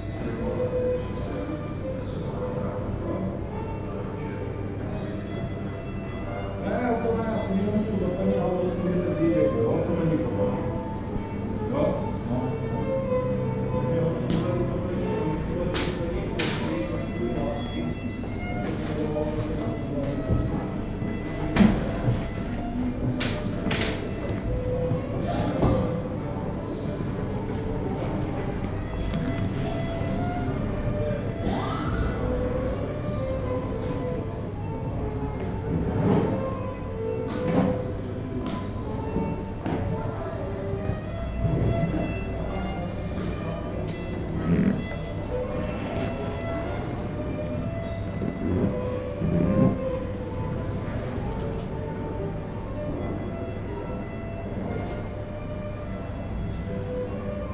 Old viol player performing by the entrance to the Church of Saint Josef in Josefská Street in the Center of the town. On the end his song merging with the celebration inside.
Brno-střed, Czech Republic, September 2012